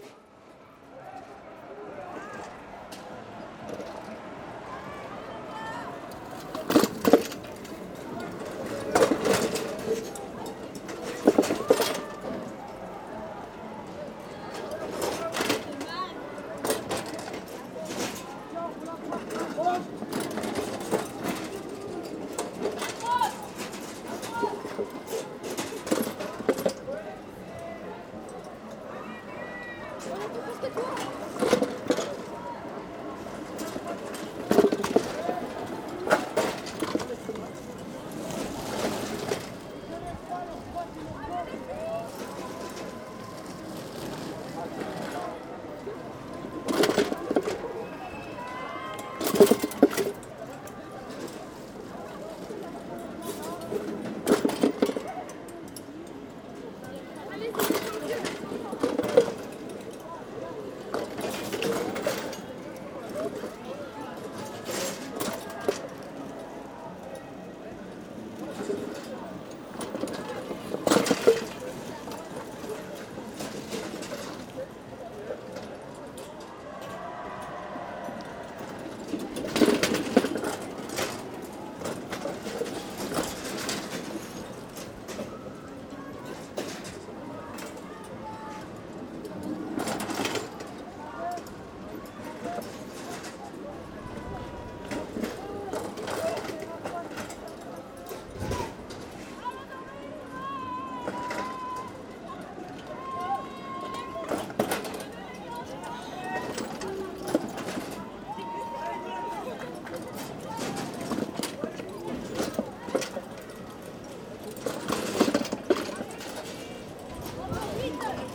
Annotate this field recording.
I asked myself during the race : how to explain with sound this is here an enormous four wheels race ? Not easy... I took the idea to record the wheels, jumping a small metallic gutter. That's probably the best way... So its wheels wheels wheels wheels ... and at the end, a small accident.